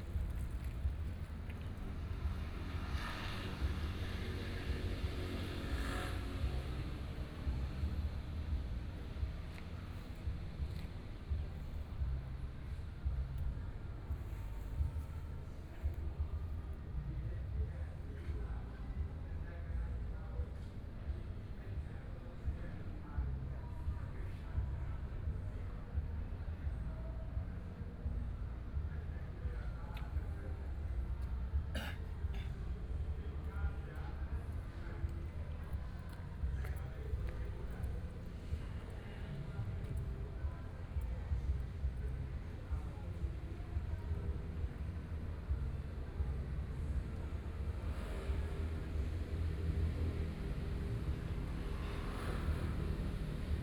Gongyuan Rd., Hualien City - Community center
in the Community activity center for the elderlyAfternoon at the community center of the square, Sony PCM D50 + Soundman OKM II
5 November 2013, 14:36